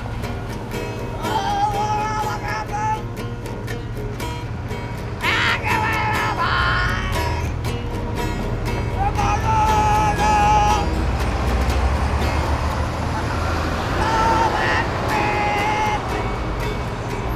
Free Man, Houston, Texas - Drunken Outsider Plays Santana
My daily commute has taken me past this wailing outsider almost every day for the last two years. I've usually passed him at speed so was never able to discern what song he was playing - until I finally approached him and asked for a tune..